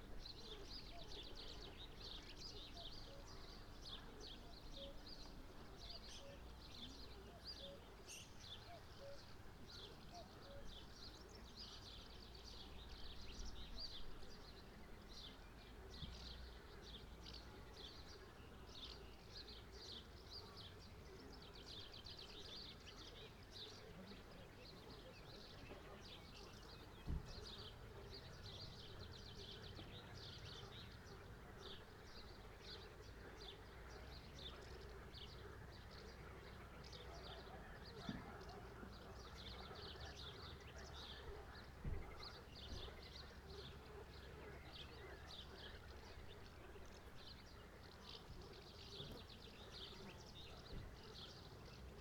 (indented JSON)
{
  "title": "Groß Neuendorf, Oder - Ufer / river bank",
  "date": "2010-05-23 12:40:00",
  "description": "am Ufer / river bank, insects, frogs, birds, people talking, ambient",
  "latitude": "52.70",
  "longitude": "14.41",
  "altitude": "9",
  "timezone": "Europe/Berlin"
}